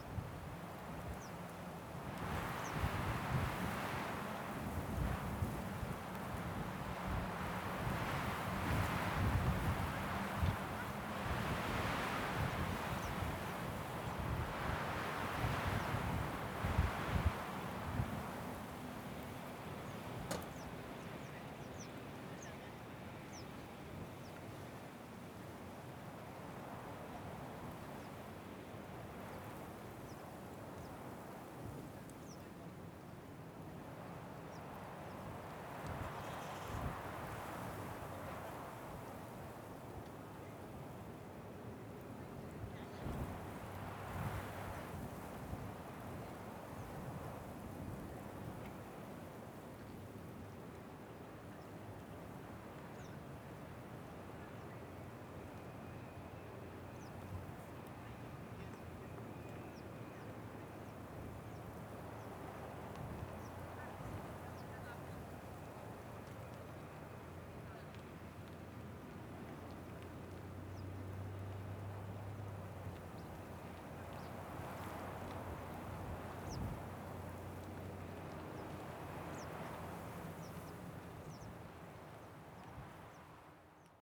Changhua County, Taiwan - The sound of the wind
The sound of the wind, Zoom H6